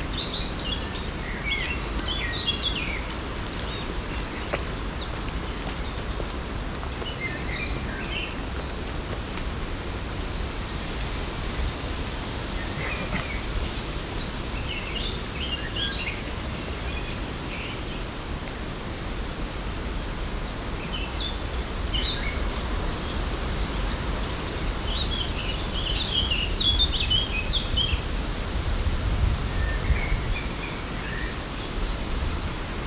Haarzopf, Essen, Deutschland - essen, rumbachtal, ambler and dog, wind in the trees
Im Landschaftsschutzgebiet Rumbachtal. Ein telefonierender Spaziergänger mit Hund passiert, die Klänge von böigem Wind in den Bäumen.
In the nature protection zone Rumbachtal. An ambler with his dog speaking on his mobile passing by. Sounds of gushy wind in the trees.
Projekt - Stadtklang//: Hörorte - topographic field recordings and social ambiences
Essen, Germany, 4 June 2014, 15:30